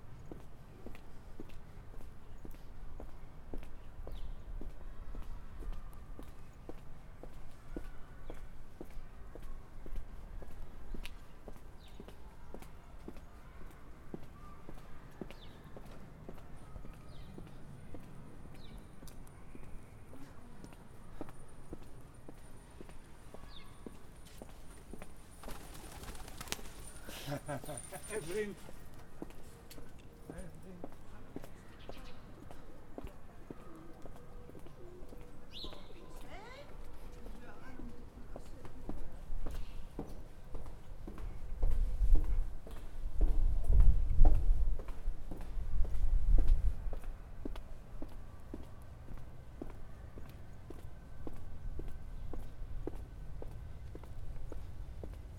Rondje Tellegenbuurt, Amsterdam, Nederland - Hard versus Zacht / Hard versus Soft
(description in English below)
Dit gebied zit vol tegenstrijdigheden. Het ene moment sta je op een plein vol spelende kinderen, het andere moment bevindt je je in een oase van rust. Het hofje laat geluid van buiten nauwelijks toe.
This area is full of contradictions. One moment you'll find yourself on a square loaded with playing and screaming children, the other moment you're in an oasis of silence. The courtyard hardly allows any sounds from outside.
Amsterdam, The Netherlands, 27 September 2013